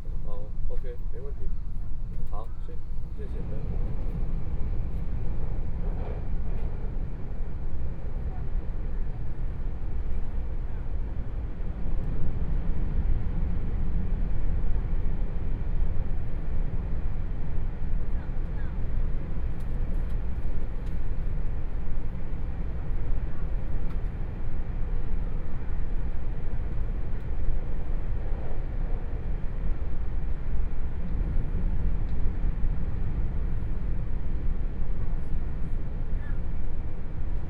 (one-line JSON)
{"title": "Sioulin Township, Hualien County - Puyuma Express", "date": "2014-01-18 15:08:00", "description": "Puyuma Express, Tze-Chiang Train, Interior of the train, North-Link Line, Binaural recordings, Zoom H4n+ Soundman OKM II", "latitude": "24.20", "longitude": "121.67", "timezone": "Asia/Taipei"}